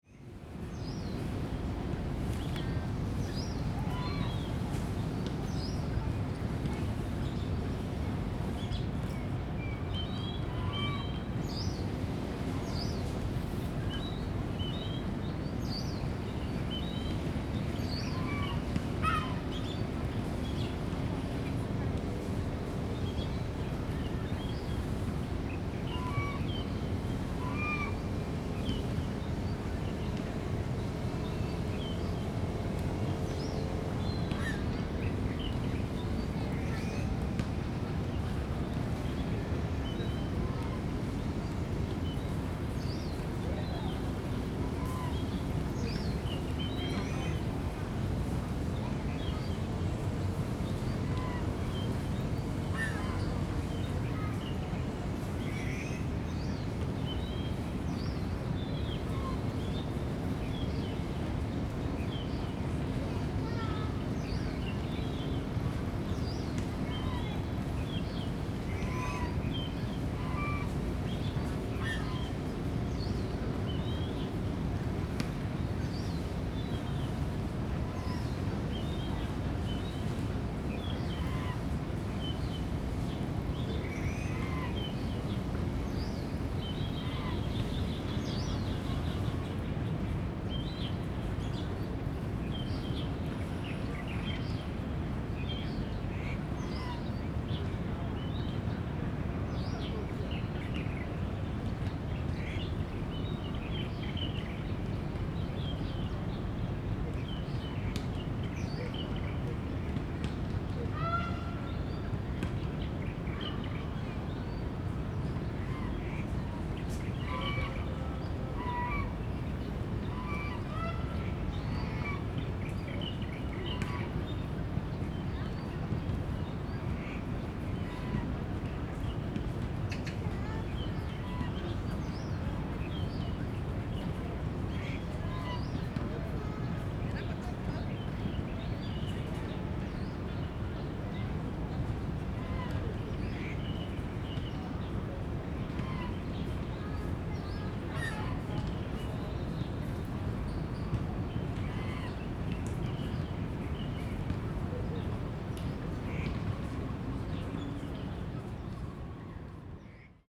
醉月湖, National Taiwan University - Goose calls and Birds sound
Chirp, Goose calls and Birds sound
Zoom H2n MS+XY